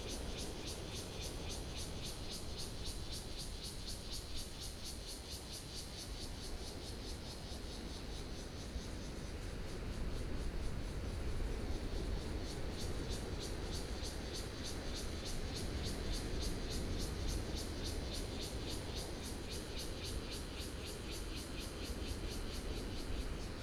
興昌村, Donghe Township - Waves and cicadas
Waves and cicadas, In the woods, The weather is very hot